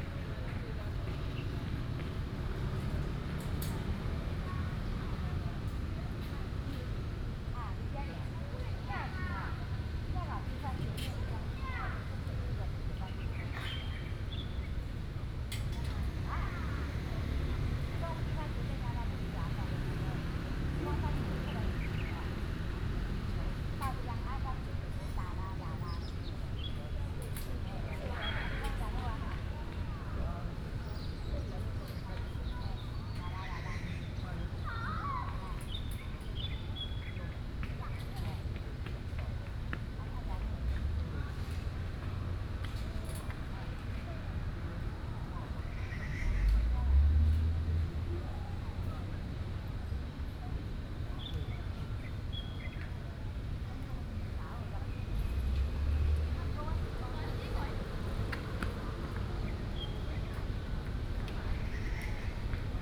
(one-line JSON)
{"title": "Sec., Heping E. Rd., Da'an Dist. - Sitting in the street", "date": "2015-06-28 18:05:00", "description": "Sitting in the street, Next to the park, Children in the park, Traffic Sound, Hot weather, Bird calls", "latitude": "25.02", "longitude": "121.54", "altitude": "20", "timezone": "Asia/Taipei"}